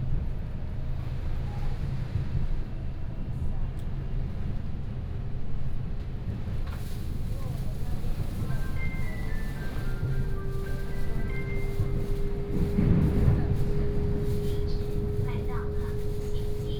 Xizhi District, New Taipei City - Train compartment
Train compartment, Outside the car is under thunderstorm